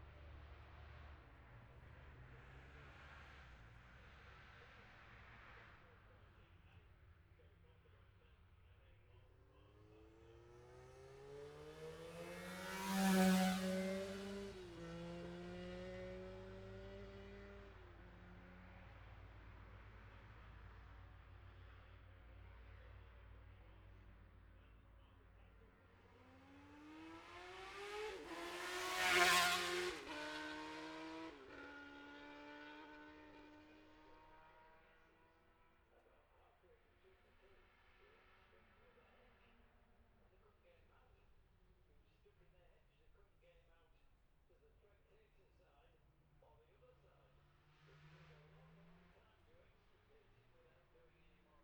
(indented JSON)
{
  "title": "Jacksons Ln, Scarborough, UK - olivers mount road racing ... 2021 ...",
  "date": "2021-05-22 11:53:00",
  "description": "bob smith spring cup ... ultra-light weights qualifying ... dpa 4060s to MixPre3 ...",
  "latitude": "54.27",
  "longitude": "-0.41",
  "altitude": "144",
  "timezone": "Europe/London"
}